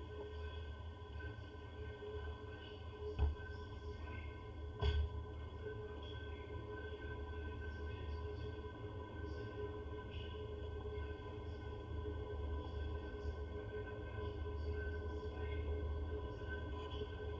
departure, train sounds recorded with contact mic
28 June 2010, 13:00